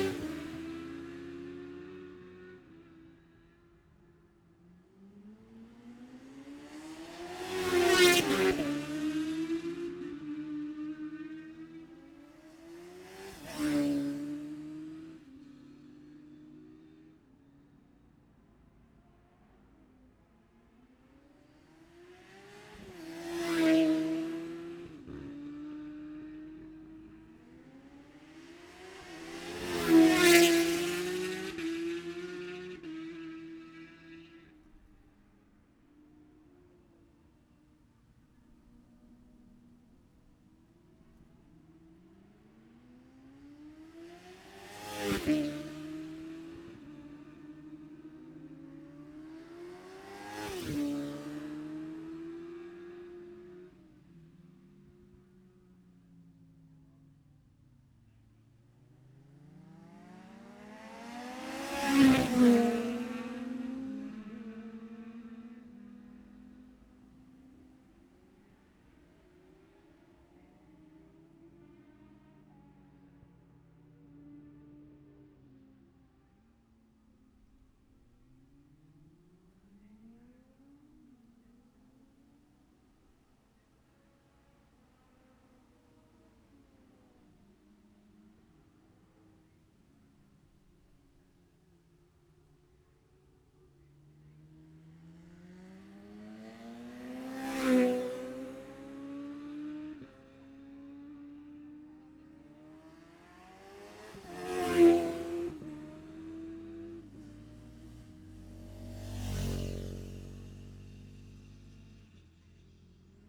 Jacksons Ln, Scarborough, UK - Gold Cup 2020 ...
Gold Cup 2020 ... Classic Superbike practice ... Memorial Out ... dpa 4060s to Zoom H5 clipped to bag ...
11 September 2020